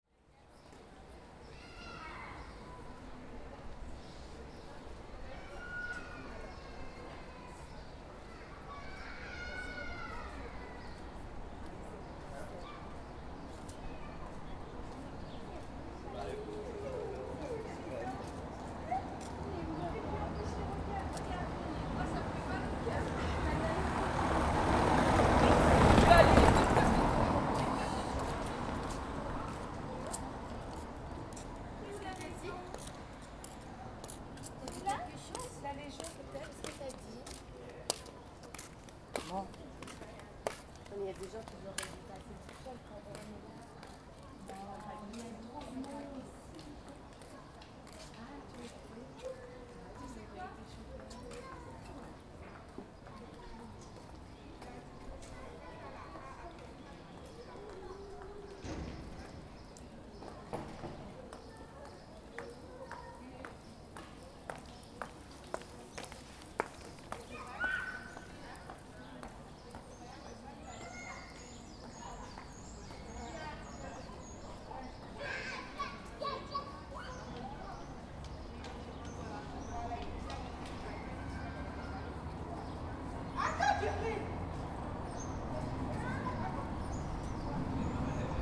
Rue de la Légion dHonneur, Saint-Denis, France - Opposite Legion dhonneur Bus Stop
This recording is one of a series of recording, mapping the changing soundscape around St Denis (Recorded with the on-board microphones of a Tascam DR-40).